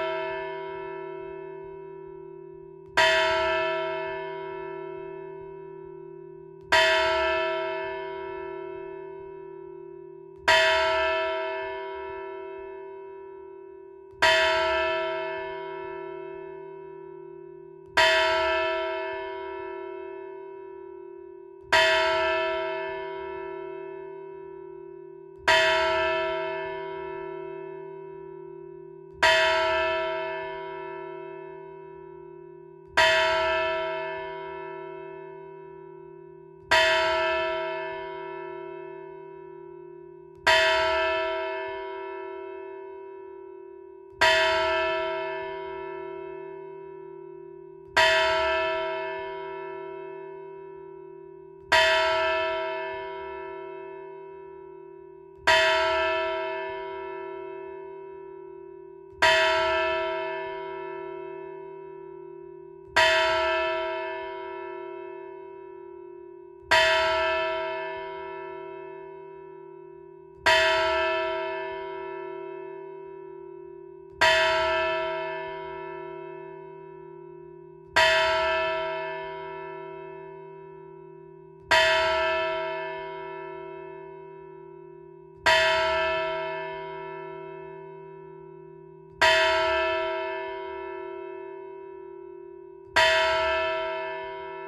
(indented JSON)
{
  "title": "Rue de l'Église, Rumegies, France - Rumegies (Nord) - église",
  "date": "2021-04-29 10:30:00",
  "description": "Rumegies (Nord)\néglise - tintement automatisé",
  "latitude": "50.49",
  "longitude": "3.35",
  "altitude": "29",
  "timezone": "Europe/Paris"
}